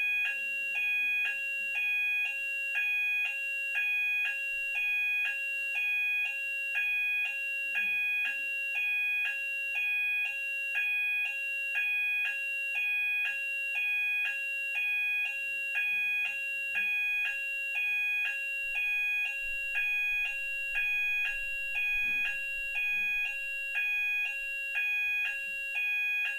fire alarm ... dpa 4060s in parabolic to mixpre3 ... best part of two hours before it was silenced ...
Alba / Scotland, United Kingdom, 30 January 2022, ~01:00